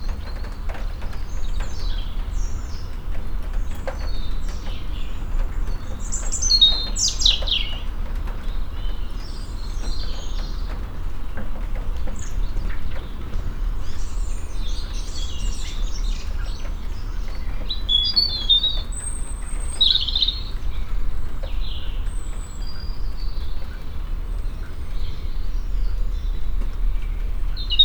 {
  "title": "Heavy Rain, Malvern Wells, Worcestershire, UK - Rain Storm",
  "date": "2021-01-27 03:23:00",
  "description": "From an overnight recording of rain on a horizontal metal door. Mix Pre 6 II with 2 x Sennheiser MKH 8020s.",
  "latitude": "52.08",
  "longitude": "-2.33",
  "altitude": "120",
  "timezone": "Europe/London"
}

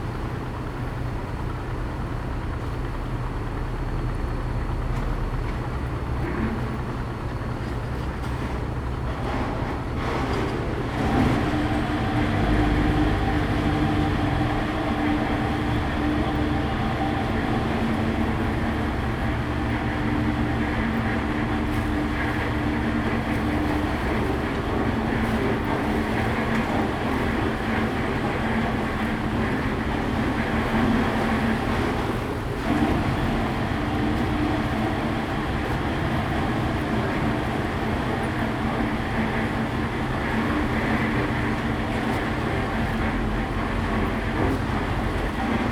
{
  "title": "馬公港, Penghu County - In the fishing port",
  "date": "2014-10-22 06:53:00",
  "description": "In the fishing port\nZoom H2n MS+XY",
  "latitude": "23.57",
  "longitude": "119.57",
  "altitude": "8",
  "timezone": "Asia/Taipei"
}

